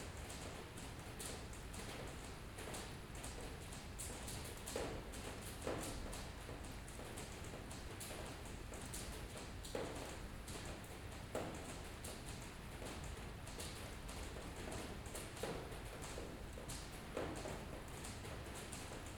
Raining in A Coruña recorded from a seventh floor. The microphone was pointing at a inner courtyard.